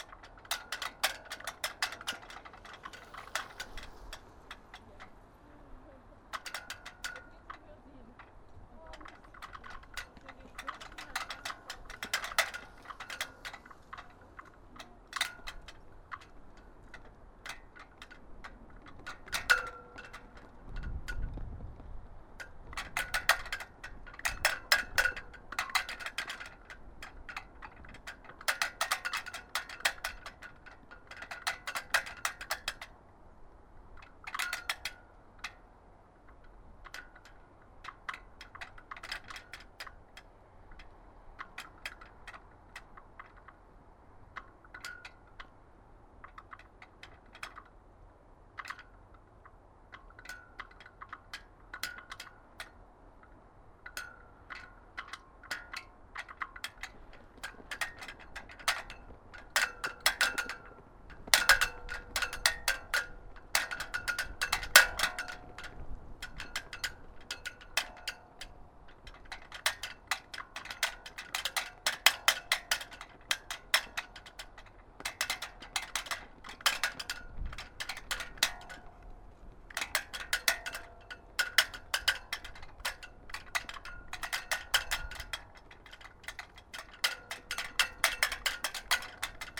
On a sunny and cold winter evening, sound of a flag in the wind.